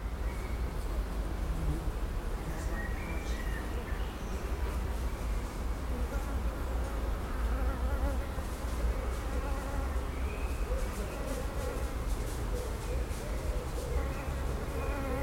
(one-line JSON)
{"date": "2008-06-16 18:08:00", "description": "Brussels, Parc Duden, a dead bird near the water.\nEn promenade au Parc Duden à Bruxelles, jai vu un trou deau et une charogne pourrissante, un oiseau vraisemblablement.", "latitude": "50.81", "longitude": "4.33", "altitude": "78", "timezone": "Europe/Brussels"}